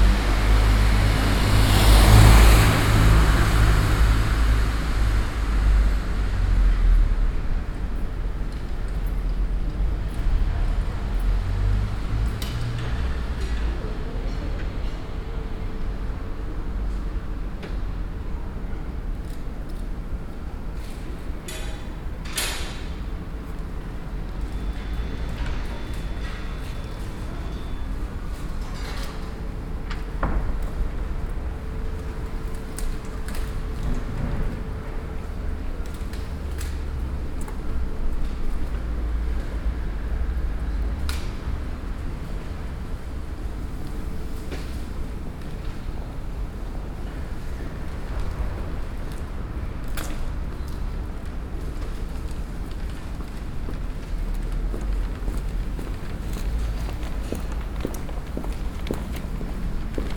Brussels, Rue Capouillet, résidence Cassiopée
Saint-Gilles, Belgium, September 2011